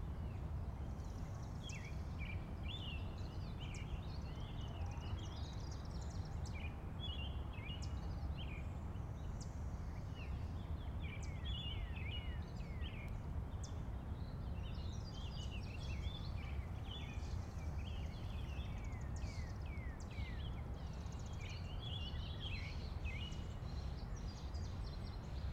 Washington Park, South Doctor Martin Luther King Junior Drive, Chicago, IL, USA - Summer Walk 4
Recorded with Zoom H2. An Interactive walk through Washington Pk.
Cook County, Illinois, United States of America